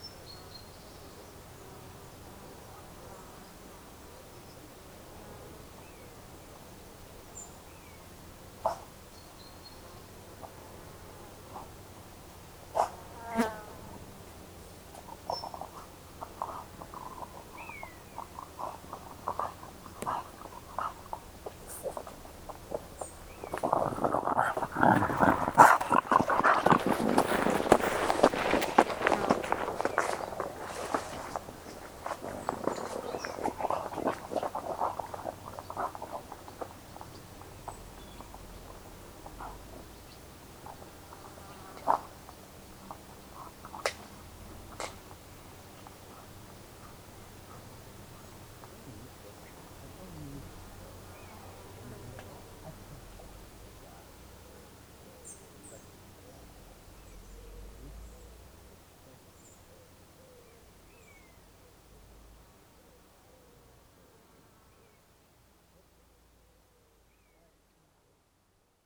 Tournedos-sur-Seine, France - Warbler
In a very quiet ambience, a warbler is singing and a dog is passing by on the pathway.